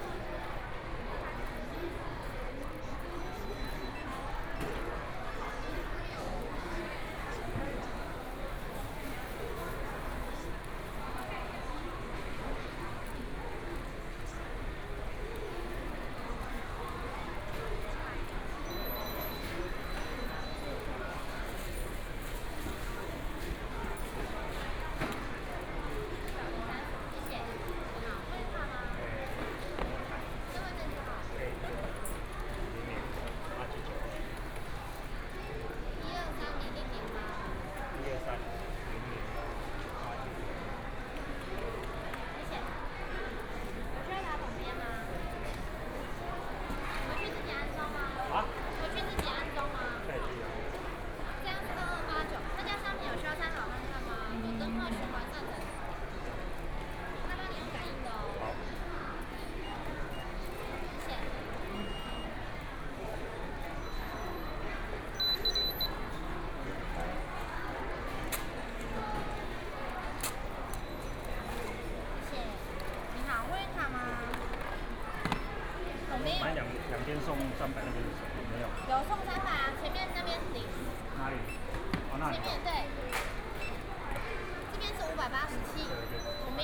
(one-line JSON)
{"title": "B&Q, Taipei City - Checkout counters", "date": "2014-05-03 17:58:00", "description": "in the B&Q plc, in the Checkout counters", "latitude": "25.09", "longitude": "121.52", "altitude": "7", "timezone": "Asia/Taipei"}